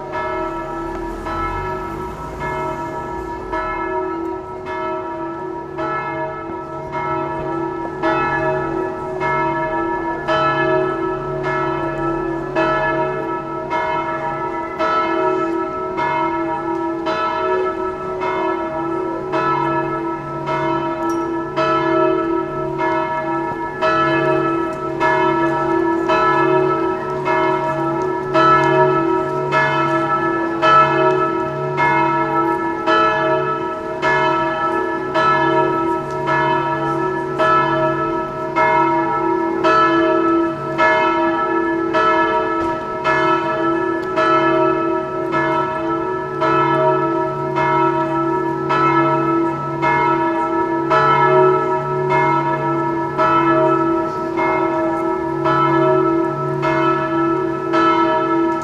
Sv. Jilji
Bells ringing on a Sunday at 6pm